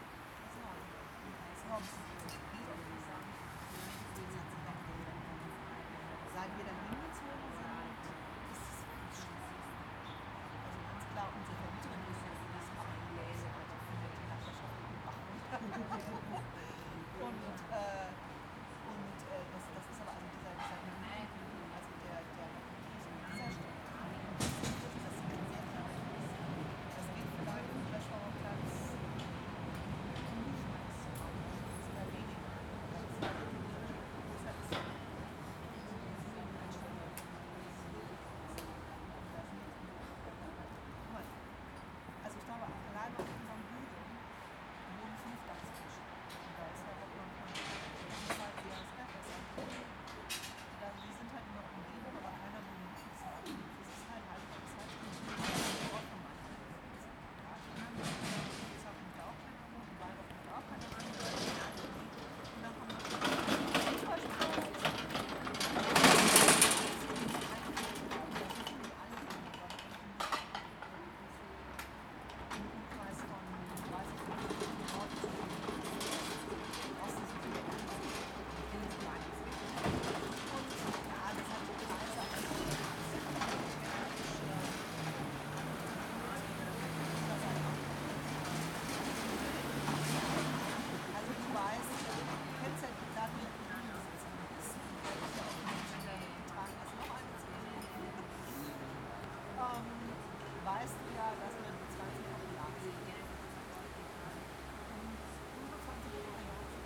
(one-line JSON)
{"title": "S-Café Friedenau, Berlin, Deutschland - S-Café Friedenau", "date": "2013-07-19 12:30:00", "description": "the s-café in friedenau (a berlin district) is located near the rails of the s-bahn, so you hear the train passing every 10 minutes. people are chatting and drinking coffe on the litte square in front of the station.", "latitude": "52.47", "longitude": "13.34", "altitude": "48", "timezone": "Europe/Berlin"}